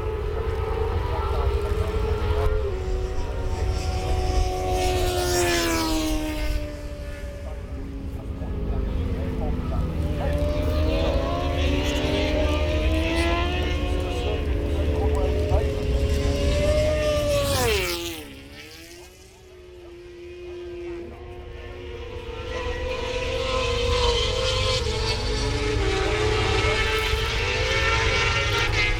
Silverstone Circuit, Towcester, UK - british motorcycle grand prix 2013 ...
moto2 fp1 2013 ...